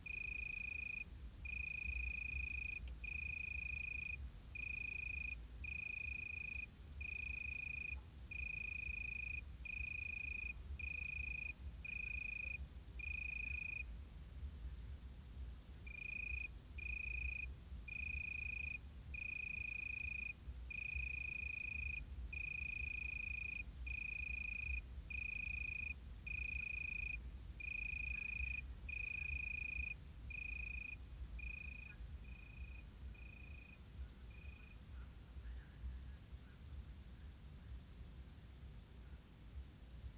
Solesmeser Str., Bad Berka, Deutschland - Suburban Germany: Crickets of Summer Nights 2022-No.2
Documenting acoustic phenomena of summer nights in Germany in the year 2022.
*Binaural. Headphones recommended for spatial immersion.
Thüringen, Deutschland, 20 August